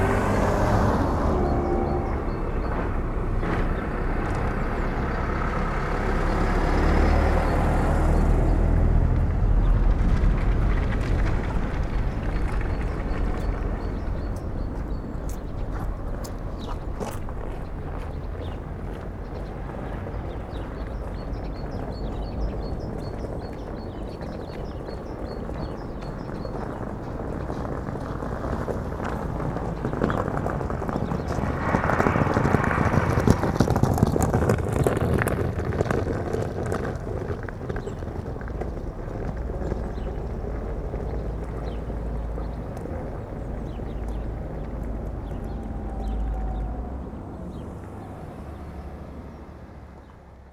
Berlin: Vermessungspunkt Friedelstraße / Maybachufer - Klangvermessung Kreuzkölln ::: 03.02.2012 ::: 10:25